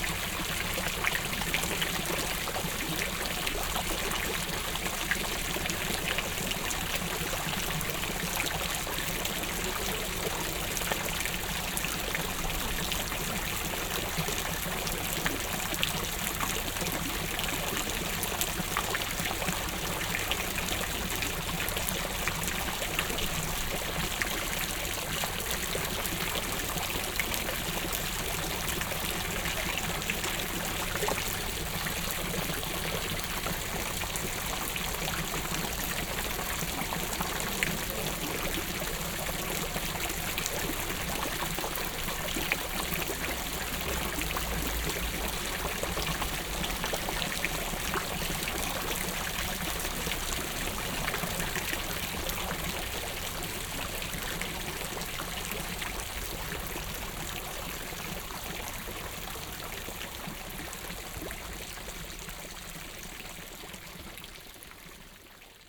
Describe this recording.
Standing on the market place at a historical fountain. The sound of the dripping and spraying water-, soundmap d - topographic field recordings and social ambiences